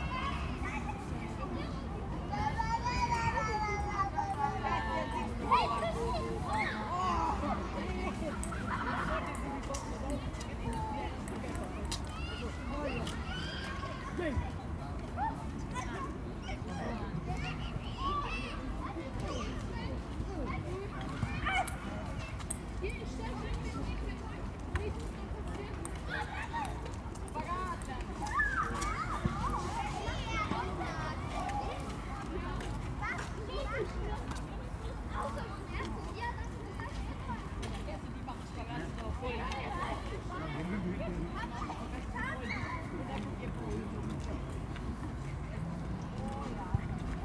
Bindermichl Tunnel, Linz, Austria - Younger kid playing, scoters, mums chatting. Nice evening atmos
Play area for younger kids. Much used in the evening on a beautiful day like this.
8 September 2020, 19:28